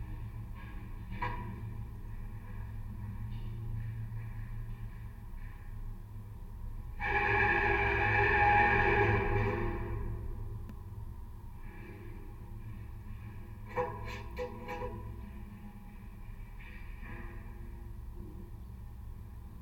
Vyžuonos, Lithuania, water tower
contact microphones on a lightning rod of abandoned watertower